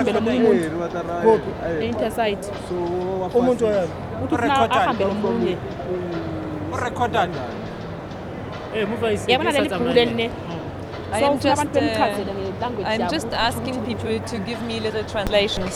For these recordings, I asked people in the inner city of Johannesburg, Park Station and Alexandra Township to read sentences from Nelson Mandela’s biography ‘Long Walk to Freedom’ (the abridged edition!) but translated on the spot into their own mother-tongues.
These are just a few clippings from the original recordings for what became the radio piece LONG WALK abridged.
and these clippings of previously unreleased footage from the original recordings made on a borrowed mini-disc-recorder in Park Station Johannesburg…
LONG WALK abridged was first broadcast across the Radia-Network of independent stations in January and February 2007.